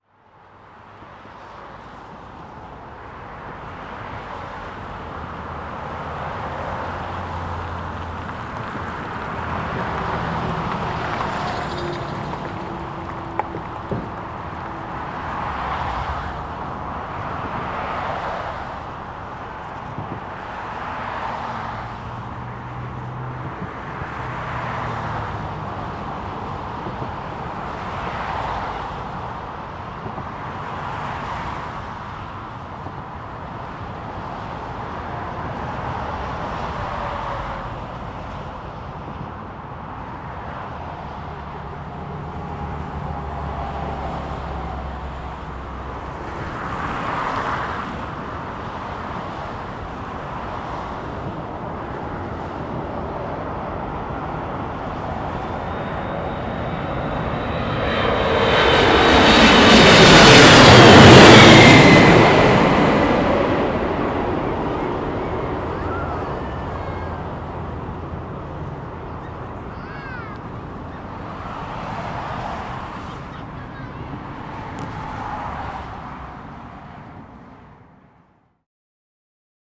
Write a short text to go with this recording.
Montreal international Airport (YUL). This is a spot where people meet to watch the jets arrivals (plane spotting). The planes passes about 40-50 meters above our heads and land just the other side of the highway. Many people take pictures, some are filming, I was alone recording the sound ... :) Zoom H2n, 4 channels mode no editing.